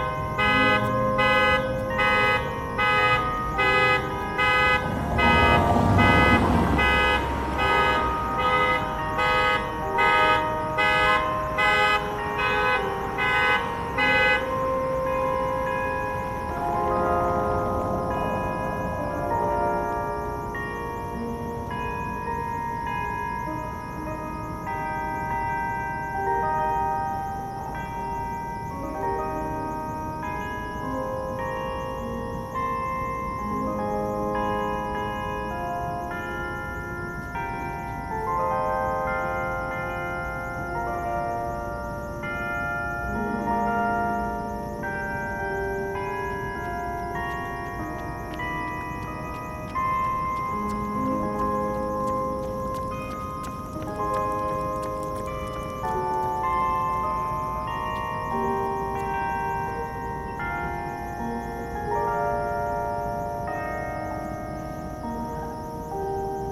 Muhlenberg College Hillel, West Chew Street, Allentown, PA, USA - Chew Street
In this recording you can hear the characteristic cobble stone on the road as cars drive over it, as well as the Muhlenberg college song in conjunction with traffic and a car alarm.